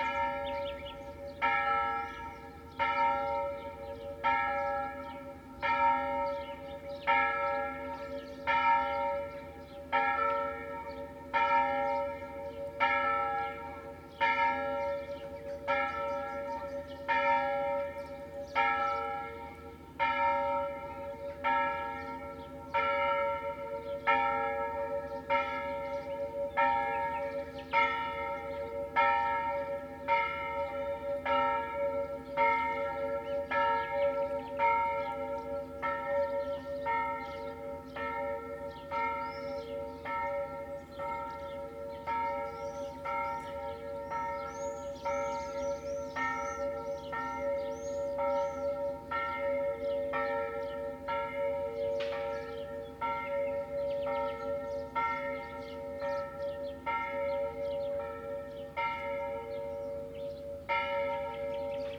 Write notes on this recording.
sound of a distant plane, birds, bells ringing (the church is on the right, on the top of a steep rocky hill)